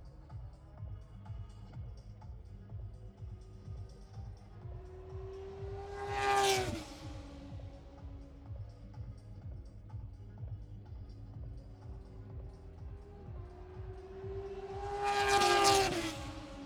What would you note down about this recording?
british motorcycle grand prix 2022 ... moto two free practice three ... bridge on wellington straight ... dpa 4060s clipped to bag to zoom h5 ... plus disco ...